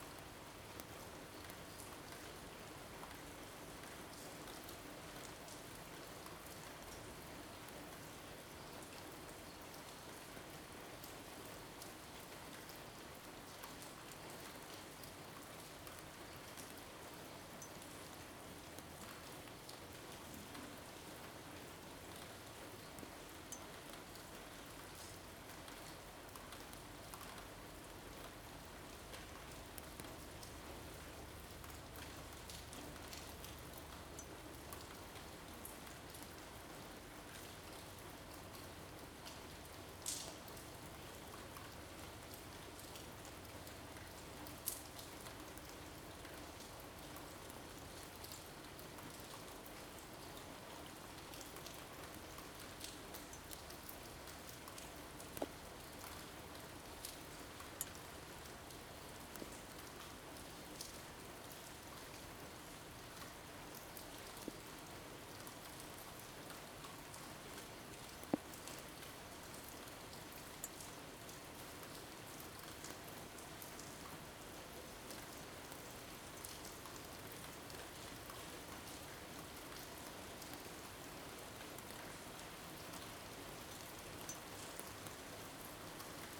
Carrer de Joan Blanques, Barcelona, España - Rain15042020BCNLockdown
Recorded from a window in Barcelona during the COVID-19 lockdown. Raw field recording of rain and some street noises, such as cars and birds. Made using a Zoom H2.
Barcelona, Catalunya, España